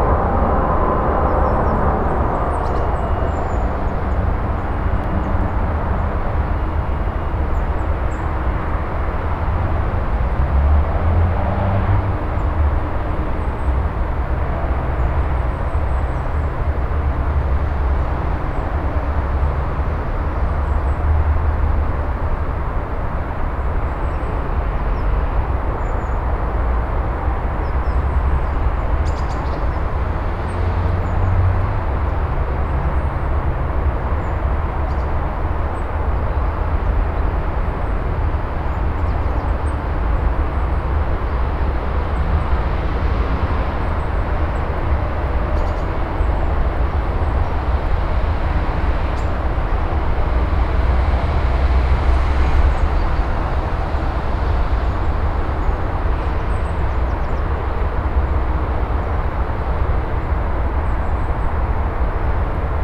Diegem, the abandoned house.
Diegem, la maison abandonnée.
Machelen, Belgium, 25 November 2008